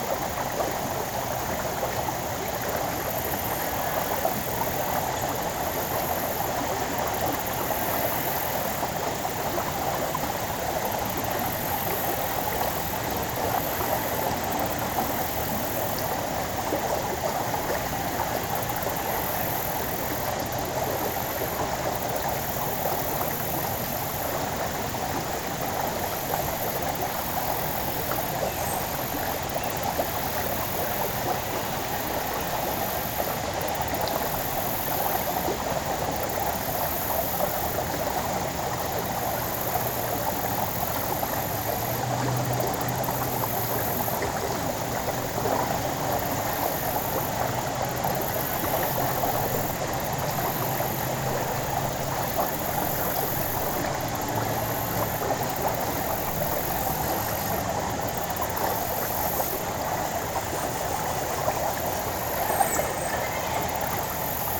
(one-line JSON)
{"title": "Sewell Mill Creek, Marietta, GA, USA - Sewell Mill Creek", "date": "2020-09-30 16:16:00", "description": "Right near the water of Sewell Mill Creek. Water flows over a fallen log to the right of the recorder and insects can be heard from each side. If you listen closely, you can hear a faint mechanical sawing sound to the left of the mic throughout parts of the recording. Some people can also be heard off to the left.\nThis recording was made with the unidirectional microphones of the Tascam DR-100mkiii. Some EQ was done in post to reduce rumble.", "latitude": "33.97", "longitude": "-84.46", "altitude": "291", "timezone": "America/New_York"}